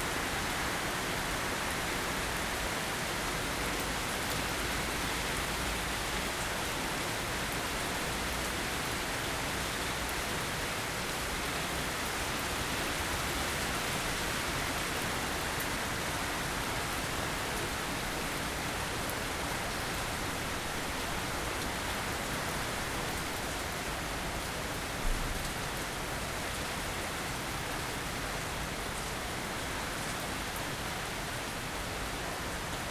São João, Portugal - Rainstorm Lisbon
Rainstorm in Lisbon.
Sounds of Rain, thunders and airplanes.
ZoomH4n
13 November 2014, ~18:00